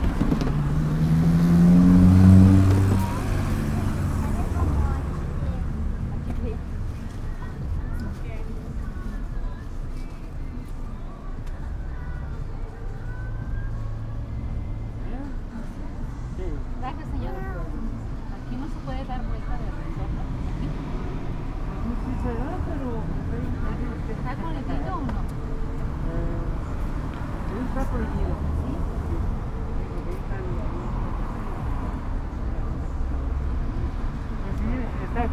Av. Panorama, Panorama, León, Gto., Mexico - Nieves de Panorama, 18 de junio 2021.
Panorama Icecreams, June 18, 2021.
There is a street stand where you can gen icecreams from natural fruits made by the Mr. who attends you at the business. His name is Mickey.
I made this recording on June 18th, 2021, at 6:01 p.m.
I used a Tascam DR-05X with its built-in microphones and a Tascam WS-11 windshield.
Original Recording:
Type: Stereo
Es un carrito de nieves hechas de frutas naturales por el mismo señor que te atiende. Se llama Mickey.
Esta grabación la hice el 18 de junio de 2021 a las 18:01 horas.